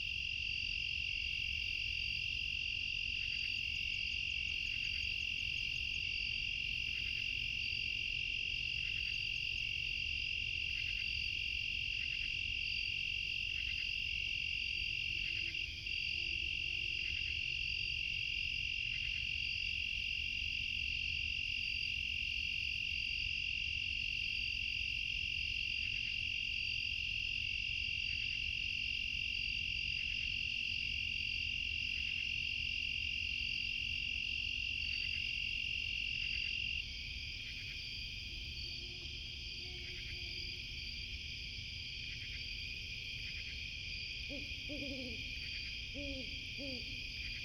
great horned owls, insect drone
fostex fr2le, at3032 omni
NJ, USA, 14 July 2008, ~00:00